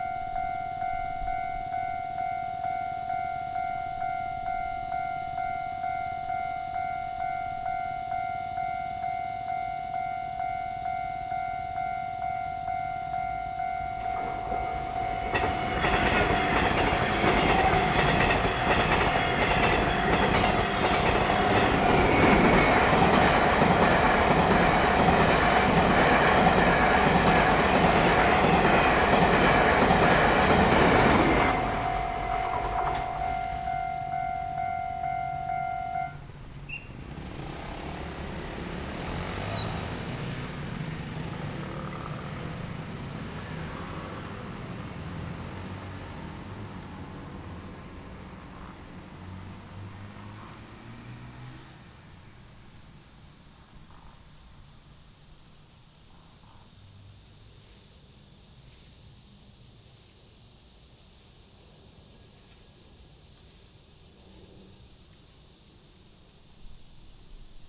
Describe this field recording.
in the railroad crossing, Cicada cry, Traffic sound, The train runs through, Zoom H6 XY